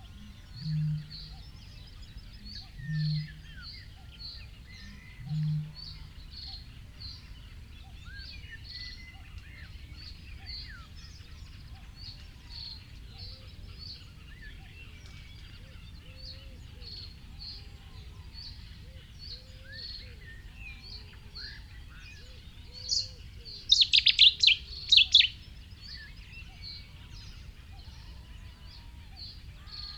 London Drove, United Kingdom - cettis warbler soundscape ...
cetti's warbler soundscape ... pre-amped mics in a SASS to Olympus LS 14 ... bird calls ... song ... from ... reed bunting ... bittern ... cuckoo ... reed warbler ... blackbird ... wren ... crow ... some background noise ...